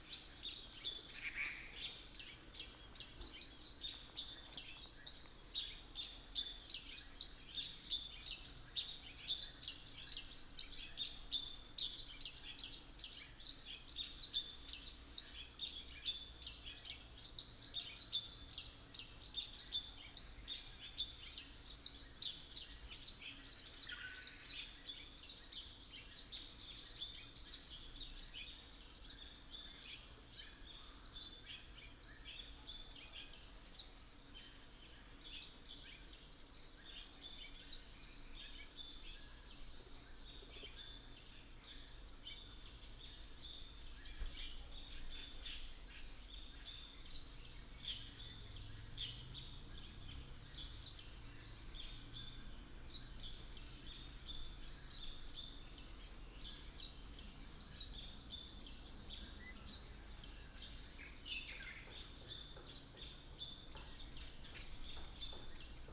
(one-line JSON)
{"title": "丹路, 南迴公路 Shizi Township - Bird call", "date": "2018-03-28 05:52:00", "description": "Beside the road, Chicken roar, in the morning, Traffic sound, Bird call\nBinaural recordings, Sony PCM D100+ Soundman OKM II", "latitude": "22.20", "longitude": "120.75", "altitude": "90", "timezone": "Asia/Taipei"}